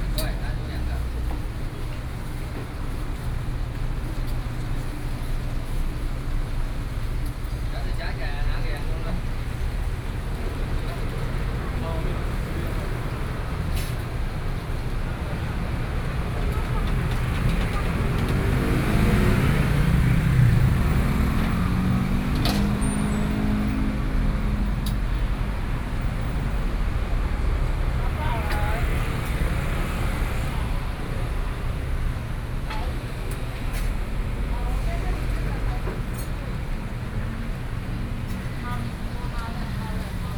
Zhengqi N. Rd., Taitung City - Fried chicken shop
In the street, Fried chicken shop, Traffic Sound
Taitung County, Taiwan, 6 September 2014